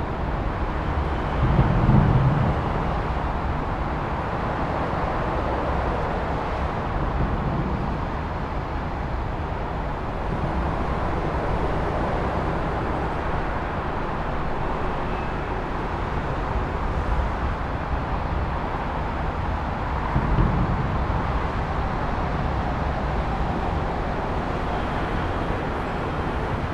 Recorded beneath Gravelly Hill Interchange in Birmingham, otherwise known as Spaghetti Junction, with a Zoom H4N. We were stood next to Tame Valley Canal with traffic passing approximately 20m above our heads. With thanks to Ian Rawes and Bobbie Gardner.
UK, 30 July 2016, 14:00